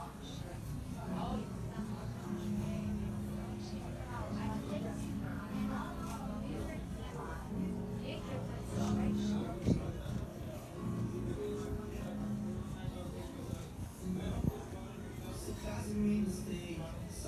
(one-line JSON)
{"title": "Herman Costerstraat, Den Haag, Nizozemsko - DE HAAGSE MARKT.", "date": "2020-04-06 14:14:00", "description": "THE HAGUE MARKET. A GOOD START FOR A DAY IN THE HAGUE. Market, The Hague.", "latitude": "52.06", "longitude": "4.30", "altitude": "2", "timezone": "Europe/Amsterdam"}